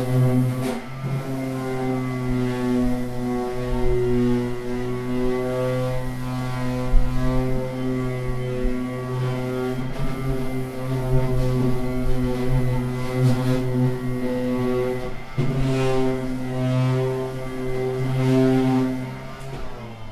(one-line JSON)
{"title": "Taranto, Province of Taranto, Italy - Taranto Sonora - Drill and new melodic music", "date": "2010-08-13 14:28:00", "description": "Taranto, old town.\nDrill and new melodic music in the small street of the old town of Taranto.\nRecorded during Taranto Sonora, a project by Francesco Giannico.", "latitude": "40.48", "longitude": "17.23", "altitude": "17", "timezone": "Europe/Rome"}